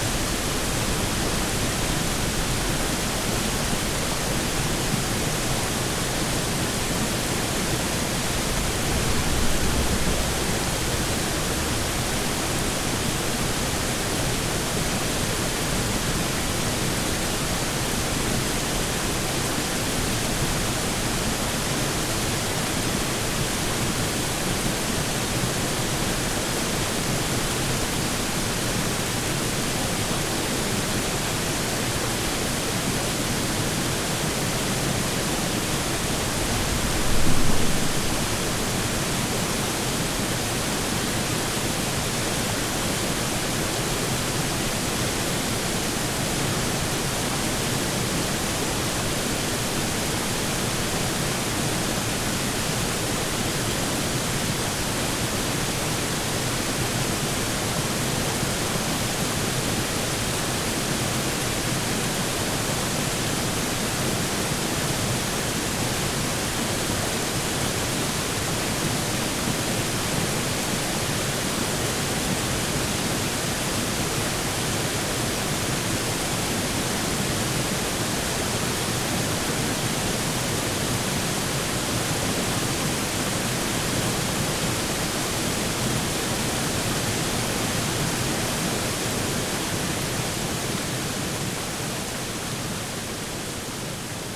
Seven Lakes Dr, Tuxedo, NY, USA - Water Over The Rocks
Water running under an overpass into Lake Skannatati, Harriman State Park. The water runs from right to left over a series of rocks, the source of the water being Lake Askoti.
[Tascam DR-100mkiii & Primo EM-272 omni mics]